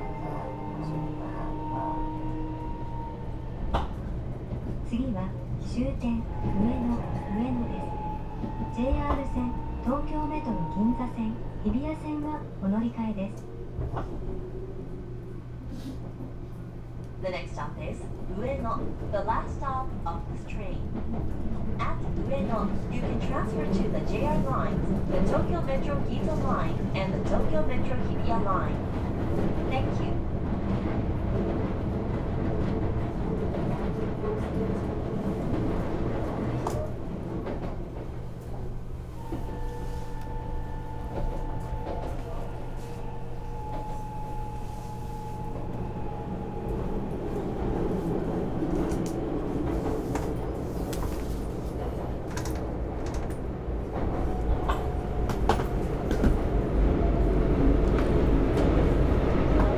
keisei-ueno, Taito, Tokyo, 上野駅 - skyliner, 3'21''
skyliner, express train, last part of the ride to ueno station